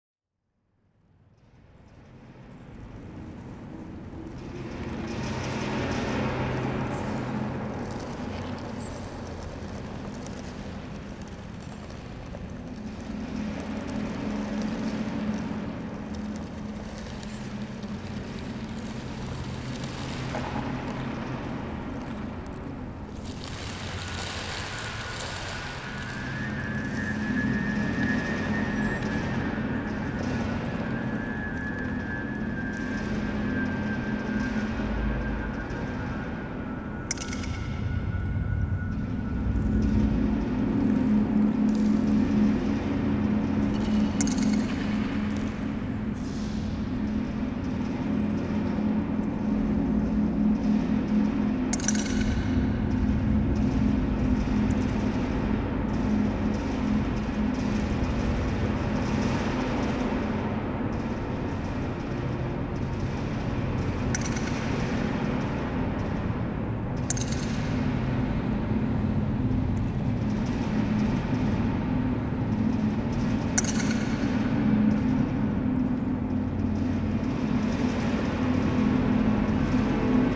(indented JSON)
{
  "title": "Teufelsberg, improvisational session in the sphere 1",
  "date": "2010-02-04 13:29:00",
  "description": "open improvisation session at Teufelsberg on a fine winter day with Patrick, Natasha, Dusan, Luisa and John",
  "latitude": "52.50",
  "longitude": "13.24",
  "altitude": "113",
  "timezone": "Europe/Tallinn"
}